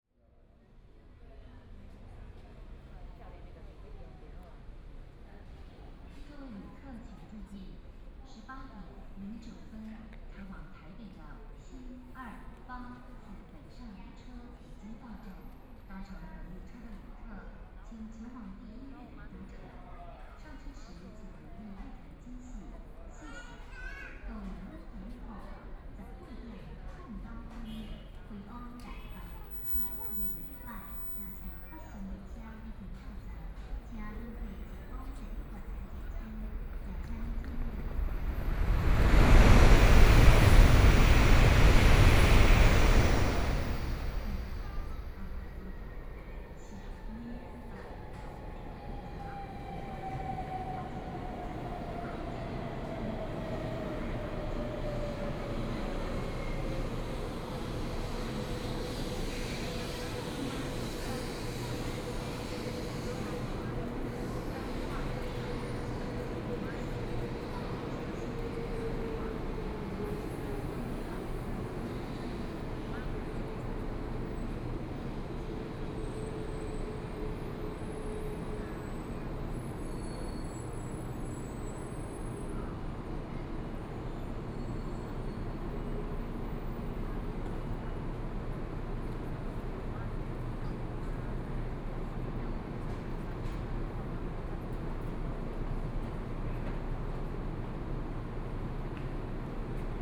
Chiayi Station, Taiwan High Speed Rail - On the platform
On the platform, Traveling by train, Train arrived, Zoom H4n+ Soundman OKM II
Taibao City, Chiayi County, Taiwan